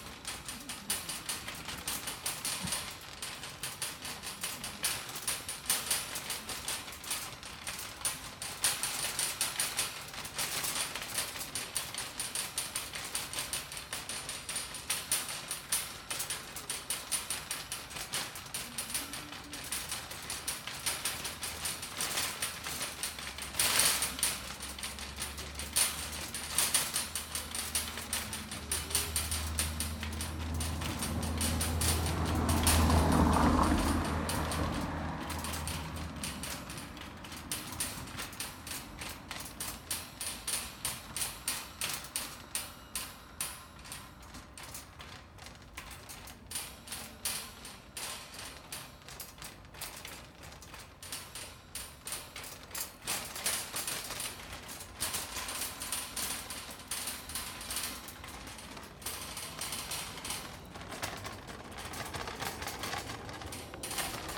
Rue Verheyden, Gare de l'Ouest, Molenbeek-Saint-Jean, Bruxelles - Weststation/Rue Verheyden-Trolley-Beat
Exploration of the street surface by walking around with a trolley, found in Rue Verheyden, near Weststation/Brussels
15 October 2016, 15:00